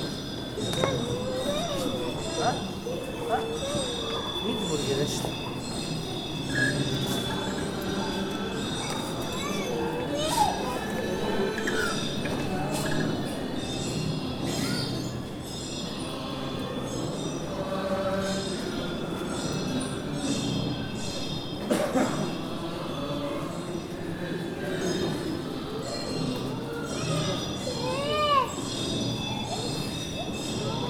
Гандан тэгчинлин хийд - Gandantegchinlin monastery - Ulan Bator - Mongolia - inside - prayer wheels
inside the temple - prayer wheels continuously turning